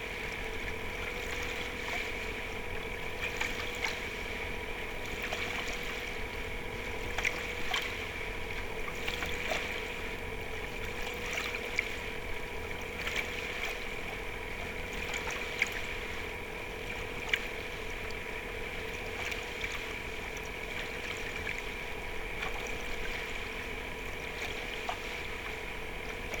ijsselmeer: boat ride - the city, the country & me: aboard a sailing yacht
contact mic at the hull of the boat
the city, the country & me: july 26, 2012